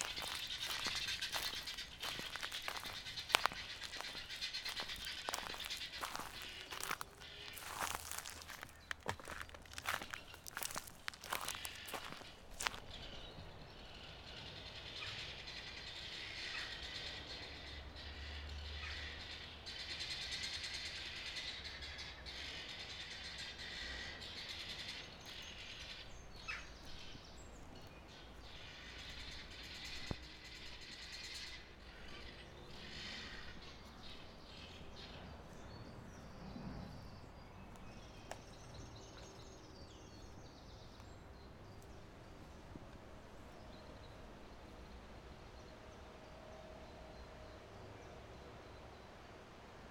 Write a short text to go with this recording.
Recorded on a Fostex FR-2LE Field Memory Recorder using a Audio Technica AT815ST and Rycote Softie.